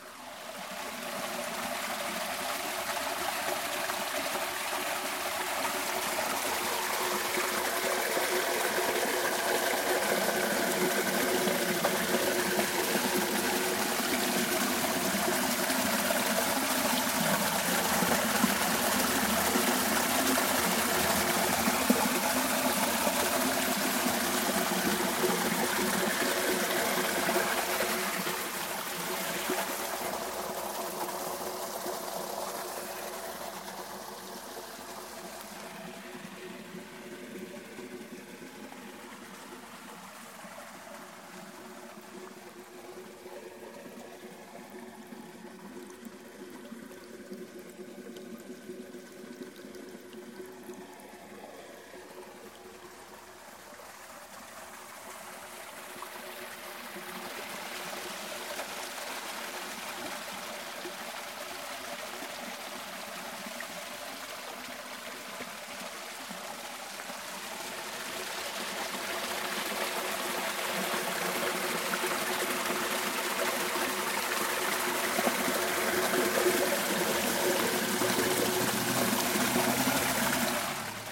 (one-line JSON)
{"title": "béal à Peypin d'Aigues - eau dans le béal", "date": "2017-07-19 11:30:00", "description": "l'eau s'écoule dans un béal qui traverse le village\nThe water flows in a béal which crosses the village", "latitude": "43.79", "longitude": "5.57", "altitude": "400", "timezone": "Europe/Paris"}